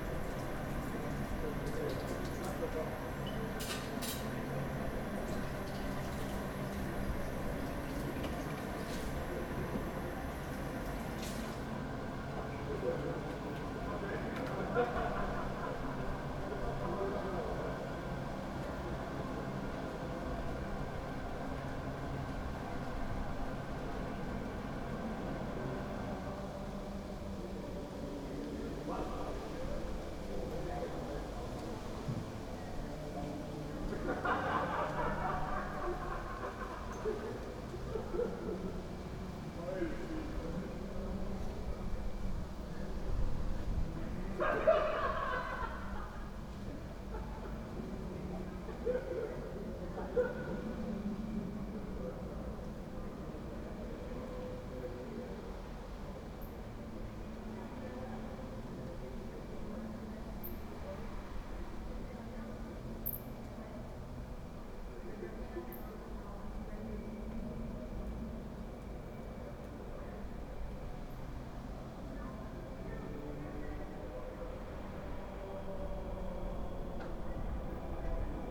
from/behind window, Mladinska, Maribor, Slovenia - late august wednesday evening
with an atmosphere of distant football game